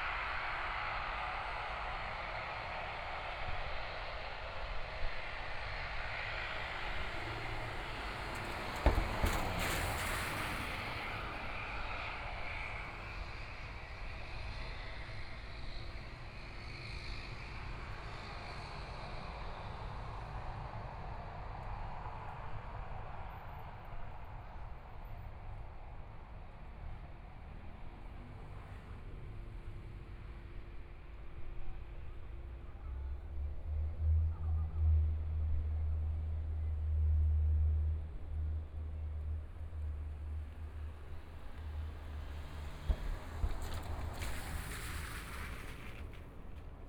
中山區大佳里, Taipei City - aircraft noise
Landing and takeoff of aircraft noise, Traffic Sound, Aircraft flying through, Binaural recordings, ( Keep the volume slightly larger opening )Zoom H4n+ Soundman OKM II